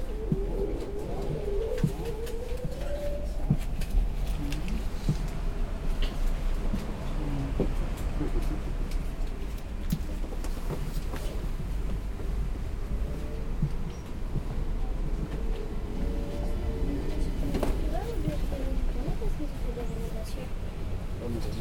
Ottignies-Louvain-la-Neuve, Belgium - Ottignies station
The Ottignies station on a saturday morning. Security guards discussing very quietly near the door, a woman lets the phone fall on the ground. Walking in the tunnel and on the platform. Train to Brussels arrives, I embark. Quiet discussions in the train, a person on the phone with a strong accent.